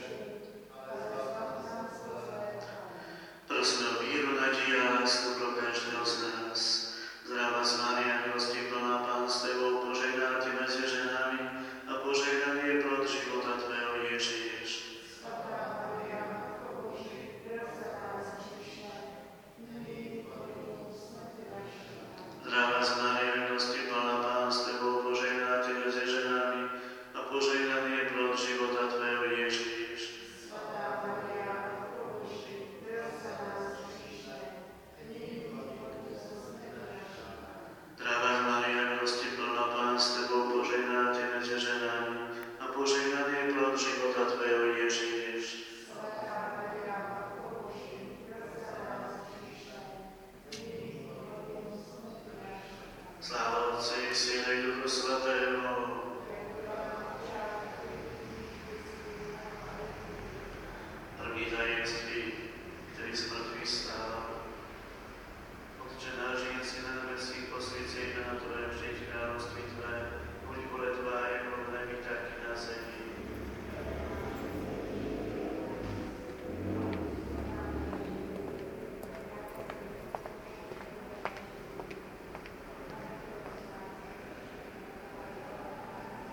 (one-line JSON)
{"title": "saint Vojtech Church, All Saints day", "date": "2011-11-02 18:01:00", "description": "inside the church during the celebration of the All Saints Day", "latitude": "50.08", "longitude": "14.42", "altitude": "206", "timezone": "Europe/Prague"}